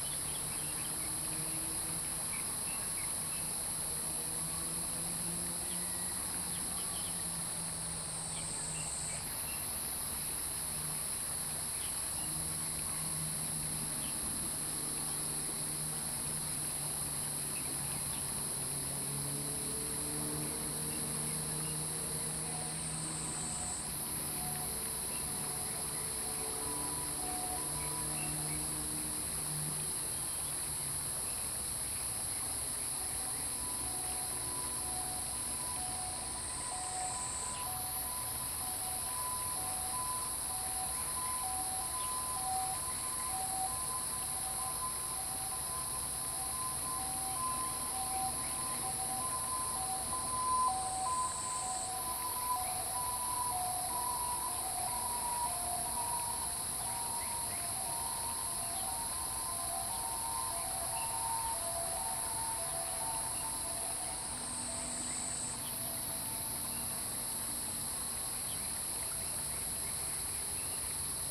土角厝水上餐廳, 埔里鎮桃米里 - Bird and Stream
Bird and Stream, Bird calls, Dogs barking
Zoom H2n MS+XY
Puli Township, 水上巷, 12 June 2015, ~06:00